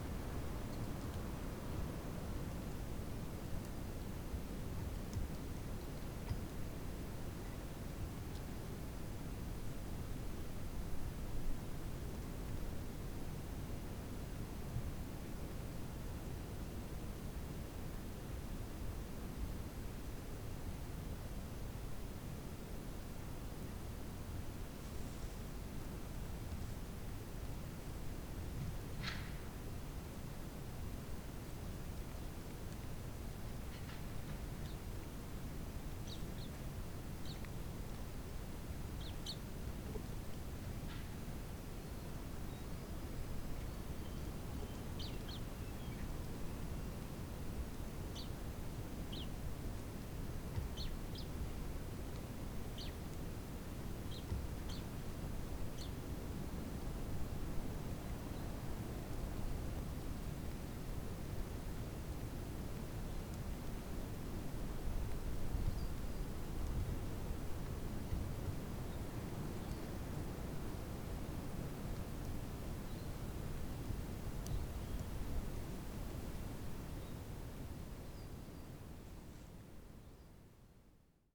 Unusual calm day in the forrest near WCS research station, almost no wind.
"Karukinka is a private park, austral and remote, located on a peninsula of Tierra del Fuego, at the southern tip of Chile. Is difficult to access, but its almost infinite 300,000 hectares are open to those who wish to venture between their ancient lenga beech forests, its always snowy mountains and lush wetlands with native flora and fauna."